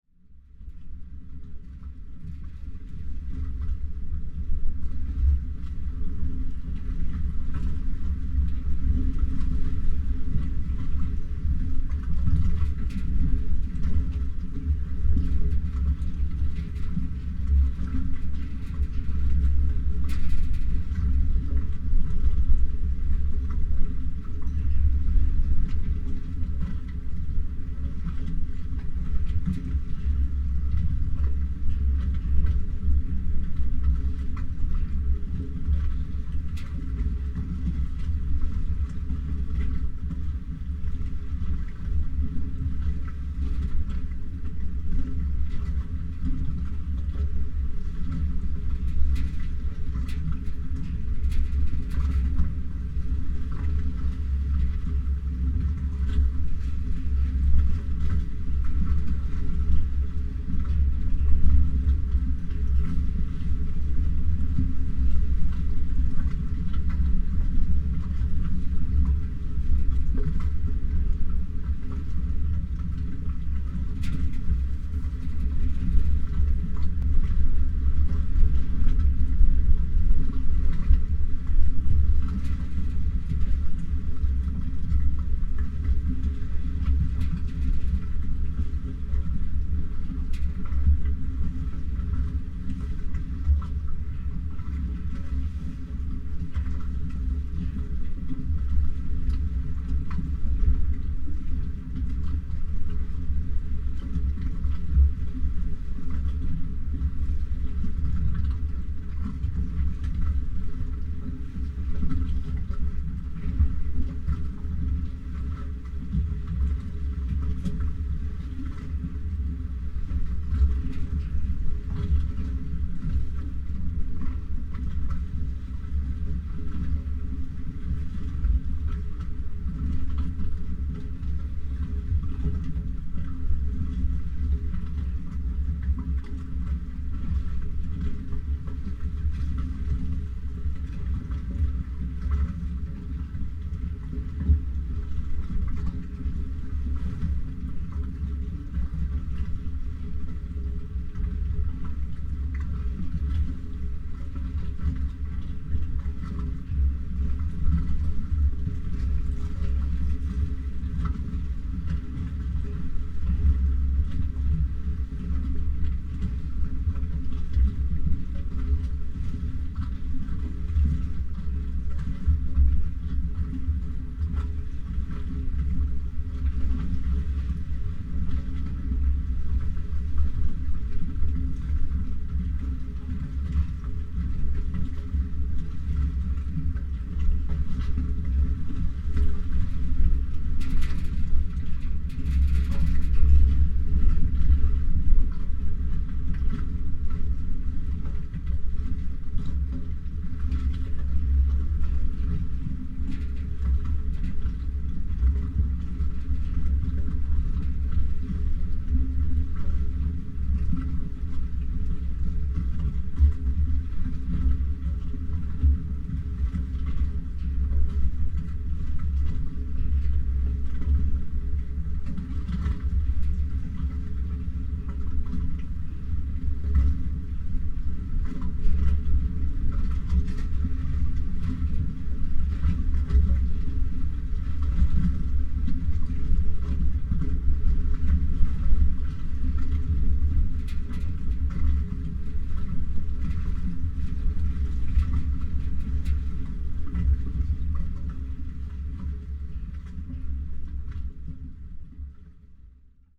{"title": "among old tires", "date": "2020-01-26 11:00:00", "description": "...a pile of old truck tires...on an exposed wharf that juts out into Suncheon Bay...", "latitude": "34.83", "longitude": "127.45", "altitude": "3", "timezone": "Asia/Seoul"}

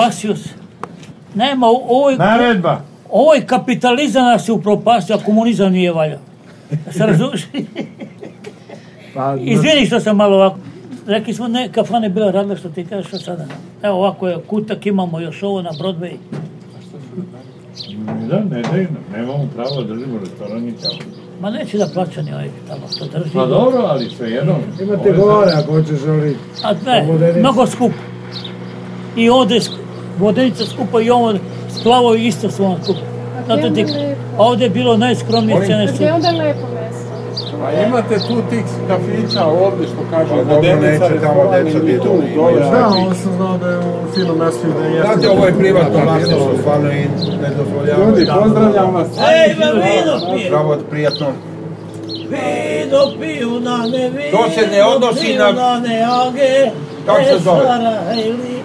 {"title": "Marina Dorcol, Belgrade - Restoran u luci (Restaurant in the port)", "date": "2011-06-15 15:07:00", "latitude": "44.83", "longitude": "20.45", "altitude": "69", "timezone": "Europe/Belgrade"}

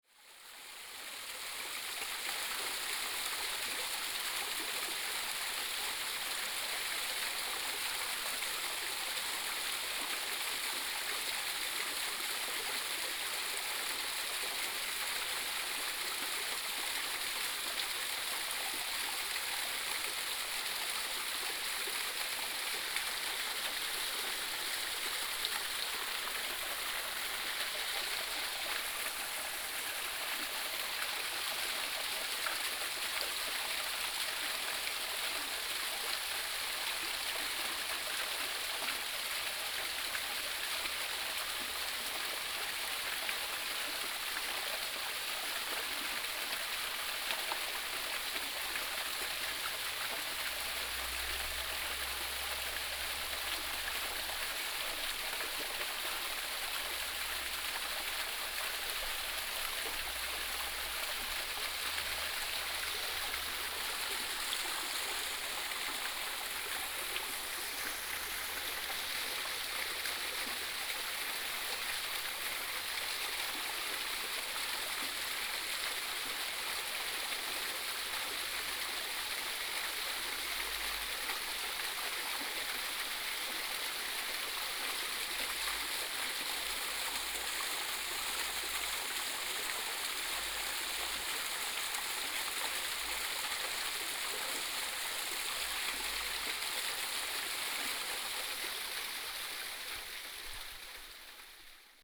{"title": "中路坑, 桃米里, Taiwan - Small waterfall", "date": "2016-11-08 13:41:00", "description": "Small waterfall\nBinaural recordings\nSony PCM D100+ Soundman OKM II", "latitude": "23.94", "longitude": "120.92", "altitude": "509", "timezone": "Asia/Taipei"}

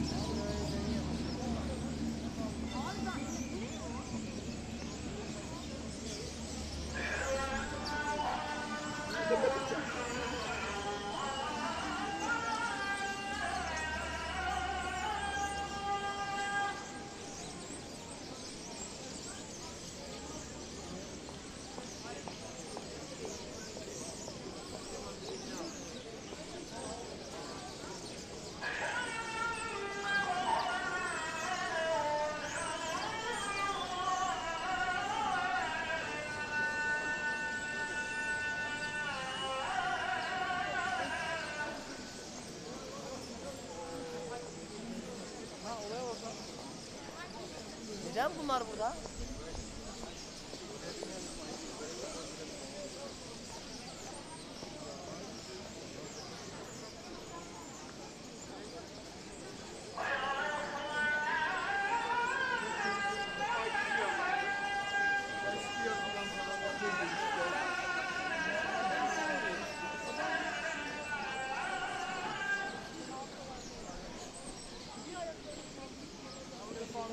{"title": "Istiklal Street, Istanbul, Turkey - Istiklal sound walk", "date": "2011-01-28 18:22:00", "description": "sounds of starlings and the azan", "latitude": "41.04", "longitude": "28.98", "altitude": "87", "timezone": "Europe/Istanbul"}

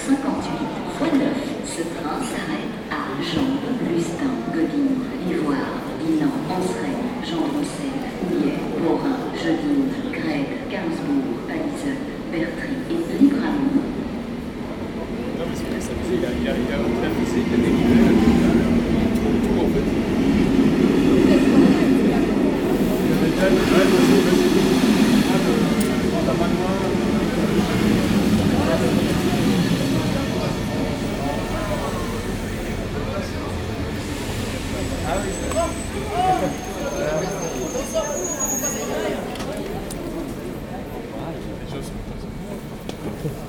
{"title": "Namur, Belgique - Namur station", "date": "2018-11-23 16:40:00", "description": "Namur station. This place is really busy. In order to represent Namur, I had the moral obligation to go there in rush hour, even if possible on a Friday evening. You can hear in this recording the pedestrian crossing, the red light, the buses, the crowd, the escalators, and then the large service corridor. On the platforms, I let several trains leave, before heading home.", "latitude": "50.47", "longitude": "4.86", "altitude": "86", "timezone": "Europe/Brussels"}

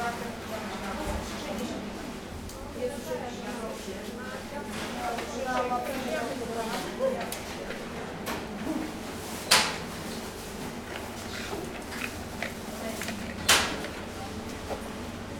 {"title": "Poznan, Jana III Sobieskiego housing estate - long line at the bakery", "date": "2014-04-18 10:45:00", "description": "waiting in a long line at the baker's shop. the place was very busy that day due to Easter. plenty of people getting bread for holidays. shop assistants explaining the different kind of breads to customers and taking orders.", "latitude": "52.46", "longitude": "16.91", "altitude": "102", "timezone": "Europe/Warsaw"}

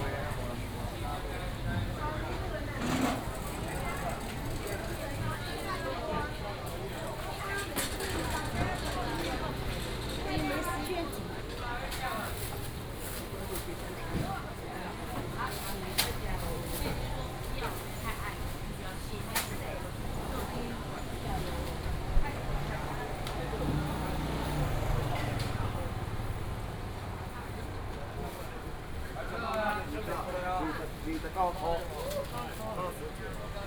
{"title": "Ln., Anju St., Da’an Dist., Taipei City - Walking through the traditional market", "date": "2015-07-17 08:18:00", "description": "Group of elderly people doing aerobics, Falun Gong, Bird calls, Cicadas cry", "latitude": "25.02", "longitude": "121.56", "altitude": "21", "timezone": "Asia/Taipei"}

